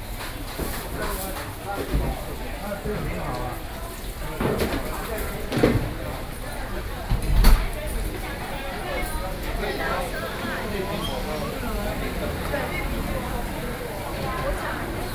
Yonghe, New Taipei City - Traditional markets